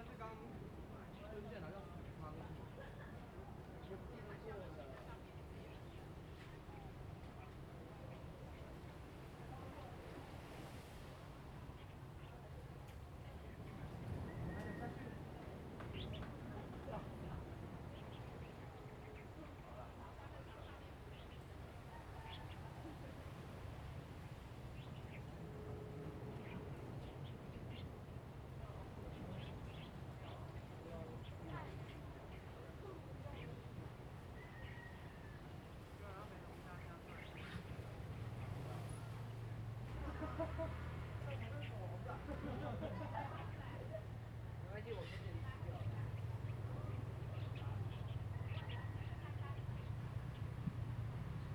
龍蝦洞, Hsiao Liouciou Island - On the coast
On the coast, Birds singing, Sound of the wave
Zoom H2n MS+XY
Pingtung County, Taiwan, November 2014